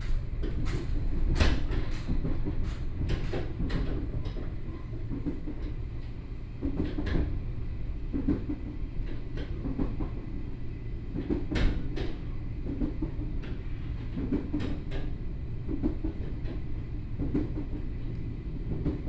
Banqiao District - Tze-Chiang Train
Tze-Chiang Train, from Shulin Station to Banqiao Station, Zoom H4n+ Soundman OKM II